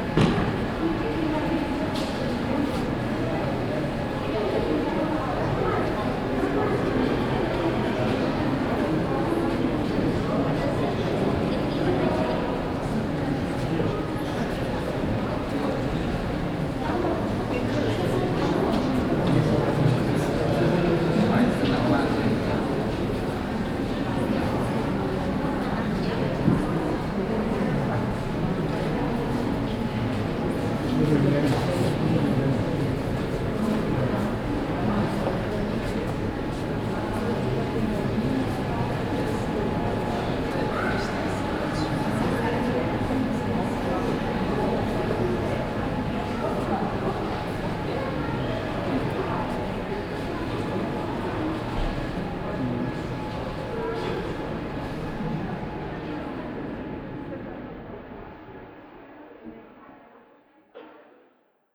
Neuss, Deutschland - museums island hombroich, langen founation, exhibtion hall
Inside the Langen Foundation exhibition hall during the Otto Piene Exhibition "Light and Air" - here the ambience from the upper front hall with audience.
soundmap d - social ambiences, topographic field recordings and art spaces